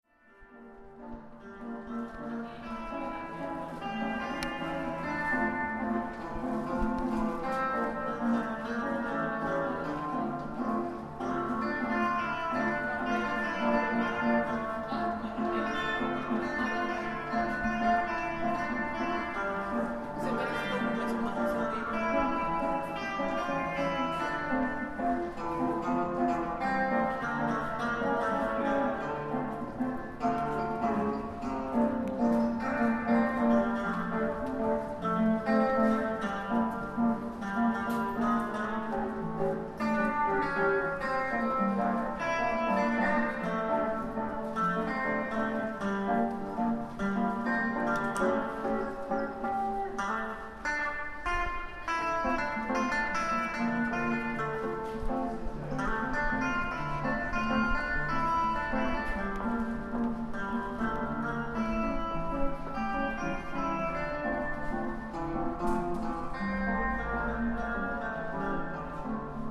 Street musician in the tunnel near Baltijaam
Street musician playing his guitar in the tunnel (under the road) near BaltiJaam. (jaak sova)
Tallinn, Estonia, 19 April